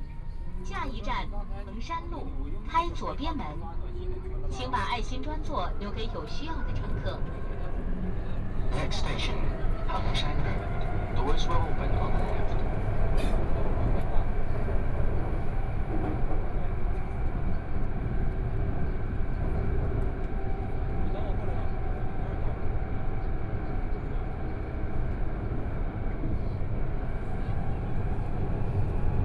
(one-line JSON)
{"title": "Xuhui District, Shanghai - Line 1 (Shanghai Metro)", "date": "2013-12-03 14:33:00", "description": "from Xujiahui station to Changshu Road station, Walking through the subway station, Binaural recording, Zoom H6+ Soundman OKM II", "latitude": "31.21", "longitude": "121.44", "altitude": "7", "timezone": "Asia/Shanghai"}